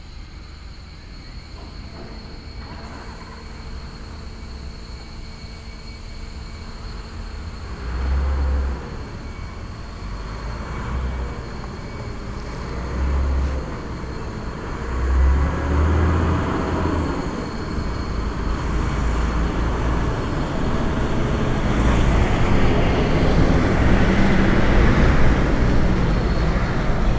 Niehler Hafen, Köln - container terminal ambience
evening hours at the container terminal Köln Niehl harbour, Westkai, container crane at work, loading and unloading of trucks
(Sony PCM D50, DPA4060)